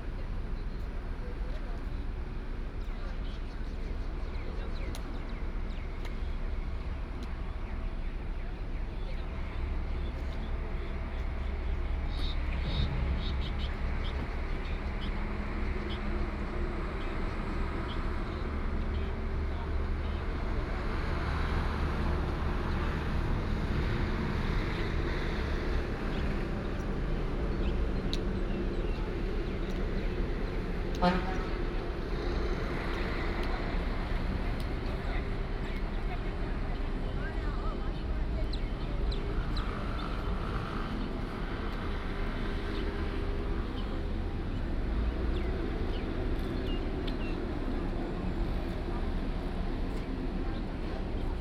空軍五村, Hsinchu City - PARKING LOT
A group of older people are here to chat and exercise, Birds sound, traffic sound, PARKING LOT, The parking lot was formerly the residence of the soldier, Binaural recordings, Sony PCM D100+ Soundman OKM II
Hsinchu City, Taiwan, 15 September 2017